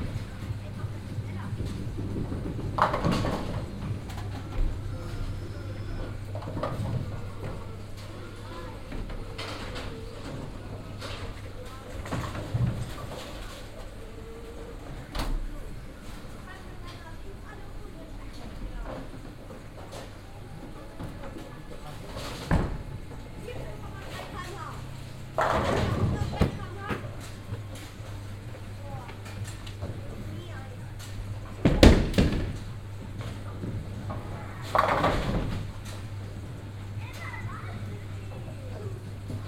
Inside a game hall. First general atmosphere with music and sounds from some game console then focussing on the sound of people playing bowling within the halls basement. recorded daywise in the early afternoon.
Projekt - Klangpromenade Essen - topographic field recordings and social ambiences
Essen, Germany, 8 June 2011